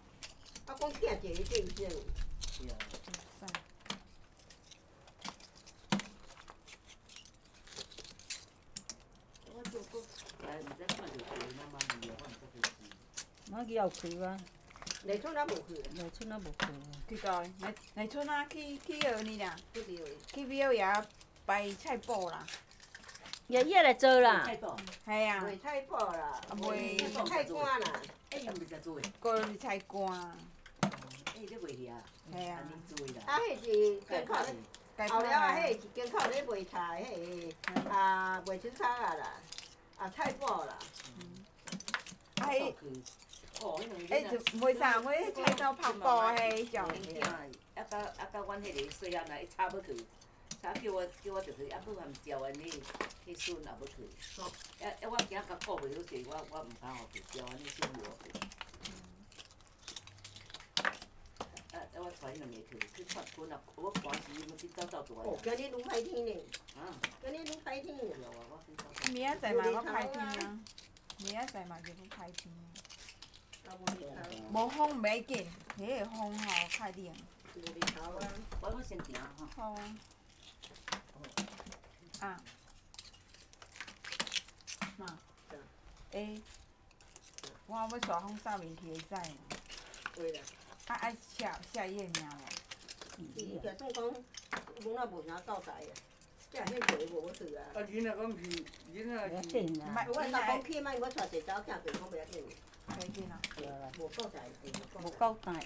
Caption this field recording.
A group of old women are digging oysters, Zoom H6 MS